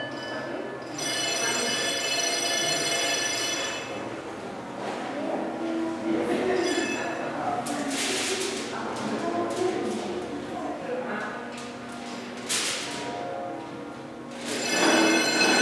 At the Leuven Refugehof inside a small Pavillion - the sound of a sound installation by Rie Nakajima entitled "touching here and hearing it" - part of the sound art festival Hear/ Here in Leuven (B). The sound of small motor driven objecs and visitors.
international sound scapes & art sounds collecion
Vlaams-Brabant, Vlaanderen, België / Belgique / Belgien, 23 April, 16:20